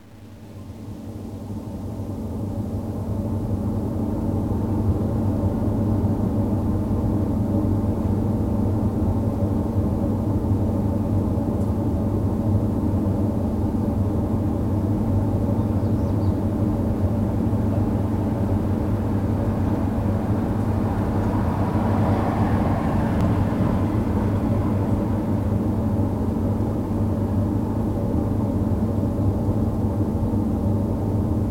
Rue Antoine Deville, Toulouse, France - Drone AIR C 02
air-conditioning, car, street